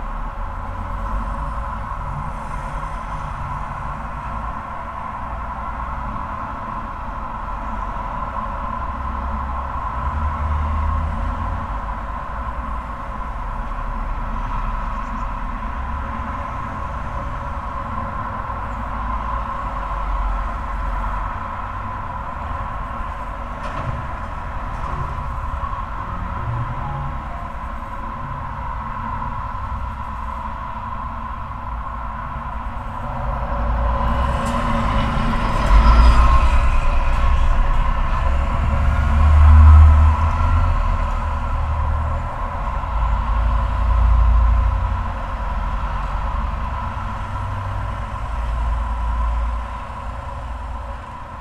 Varžupio g., Akademija, Lithuania - Drainage pipe by the roadside
Composite stereo field and dual contact microphone recording of a drainage pipe by the side of a road. Ambience, wind and traffic sounds, combined with resonant drone of the drain pipe. Recorded with ZOOM H5.